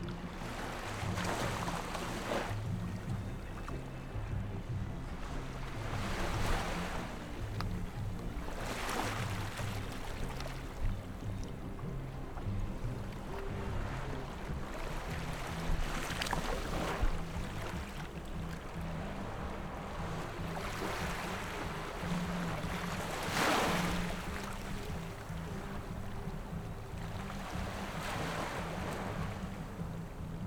Xiyu Township, Penghu County - next to Fishing port
at the beach, next to Fishing port
Zoom H6 +Rode NT4
22 October 2014, 13:52